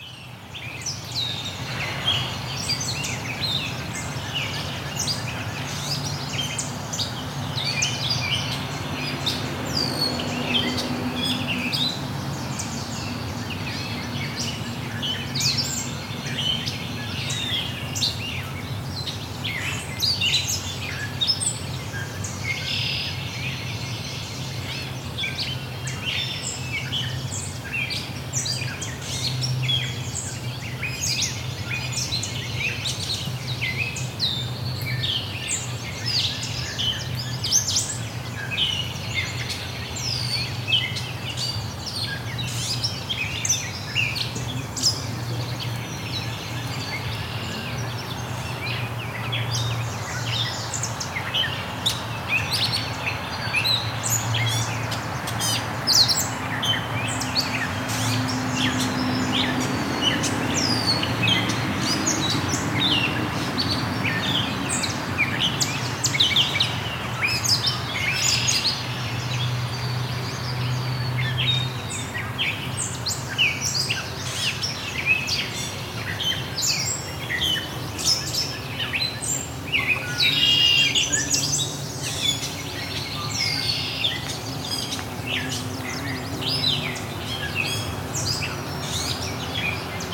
Leamington, ON, Canada - Point Pelee National Park DeLaurier House
Homestead of the DeLaurier family, built in the 1850s. Various migrating birds and one carpenter bee who takes a solo near the end.
Zoom H6 with MS stereo mic.